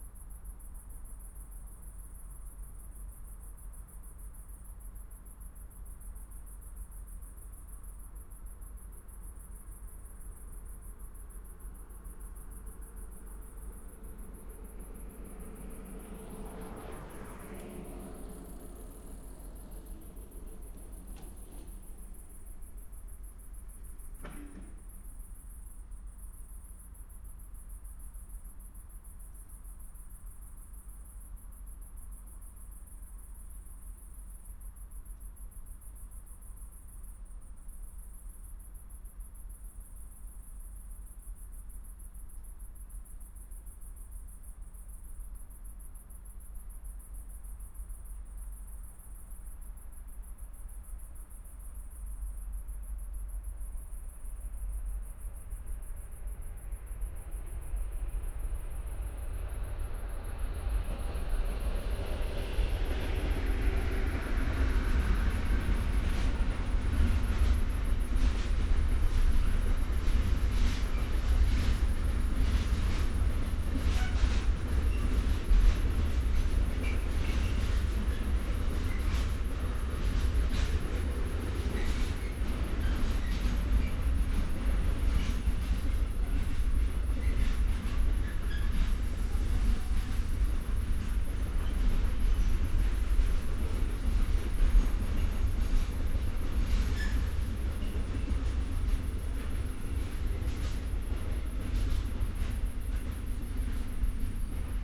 Escher Str., Nippes, Köln - near train underpass, between the tracks, night ambience
Köln Nippes, small road between the tracks, night ambience /w crickets, trains
(Sony PCM D50, Primo EM172)